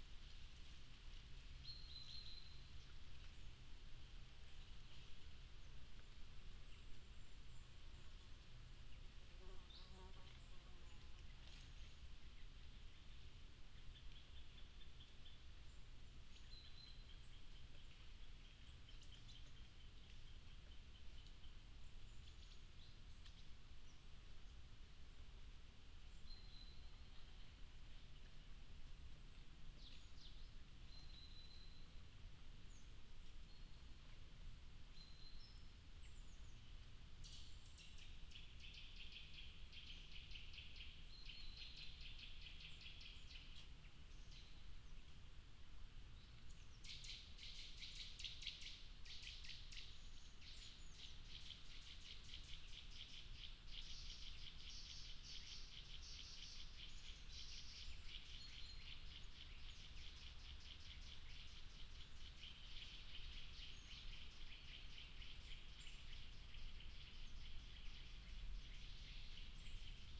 Schönbuch Nature Park, Heuberger Tor - Schönbuch Nature Park in early autumn
Naturpark Schönbuch im Frühherbst: Wind bewegt trockene Kastanienblätter im Baum und auf dem Boden, Vogel klopft gegen Baumrinde. Seltene 5 Minuten ohne Flugzeug-Geräusch.
Schönbuch Nature Park in early autumn: Wind moves dry chestnut leaves in the tree and on the ground, bird knocks against tree bark. Rare 5 minutes without aircraft noise.
Baden-Württemberg, Deutschland, 17 September 2019, 17:00